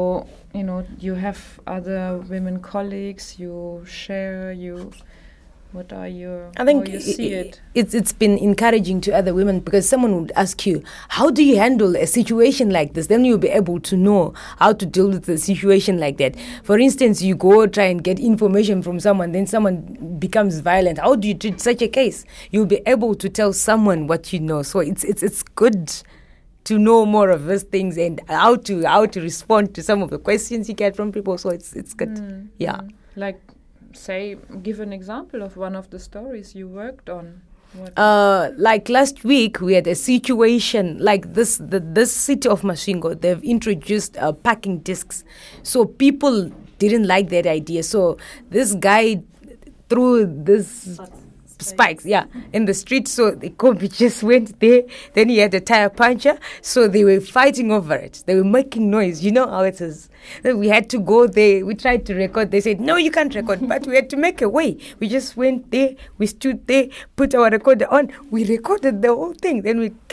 Radio Wezhira, Masvingo, Zimbabwe - Studio workshop conversation...
The recording pictures part of a workshop meeting with four of the young women members of the studio team. Sharon Mpepu, Thabeth Gandire, Chiedza Musedza, and Ivy Chitengedza are coming to the community radio and studio practice from varied professional backgrounds, but now they are forming an enthusiastic team of local journalists. Chiedza, who already came from media practice to the community radio, begins describing a situation they recently faced while gathering information in town… the others join in…